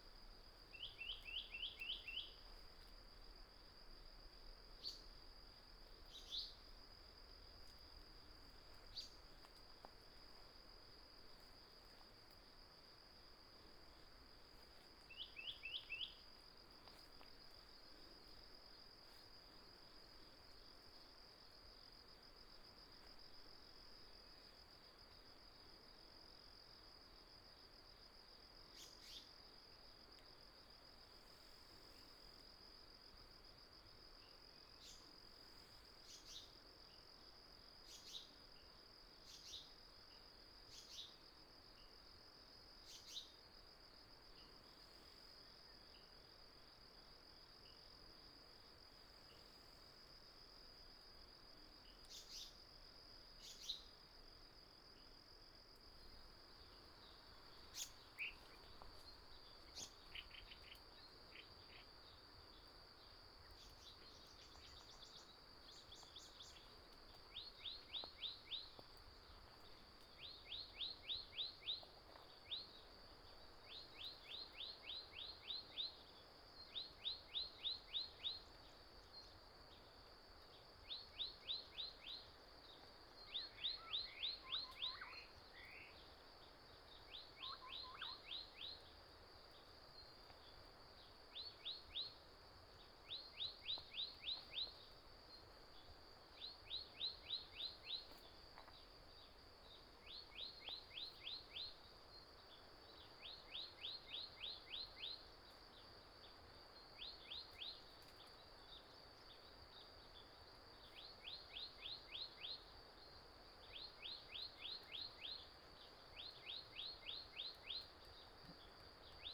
early morning, In the bush, Various bird calls, Insect noise, Stream sound